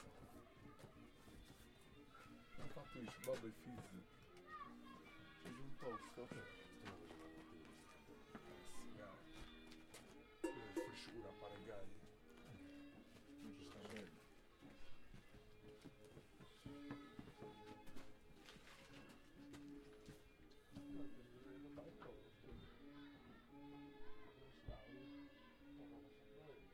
M.Lampis Sardinia - children playing with bells